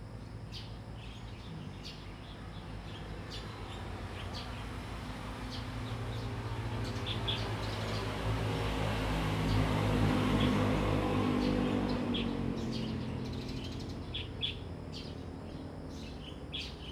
美農村, Beinan Township - Birdsong
Birdsong, Traffic Sound, Small village
Zoom H2n MS+ XY